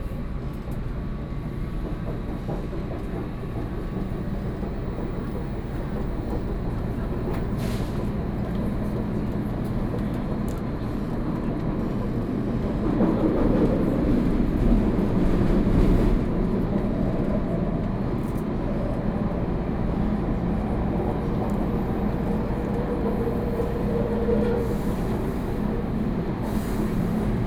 Inside the MRT train, Sony PCM D50 + Soundman OKM II
新北市 (New Taipei City), 中華民國, 1 May 2013, ~18:00